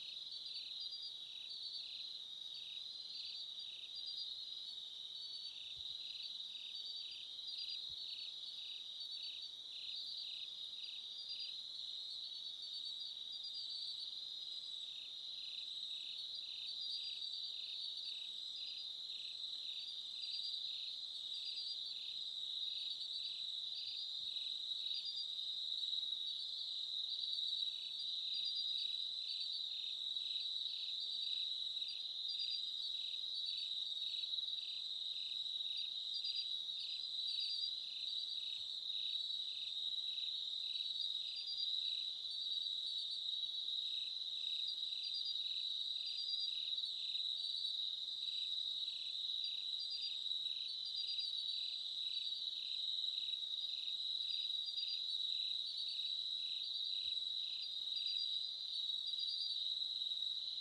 Unnamed Road, Haru, Kikuchi, Kumamoto, Japan - Mt. Aso Autumn Crickets
Autumn crickets heard while camping in a forrest.
熊本県, 日本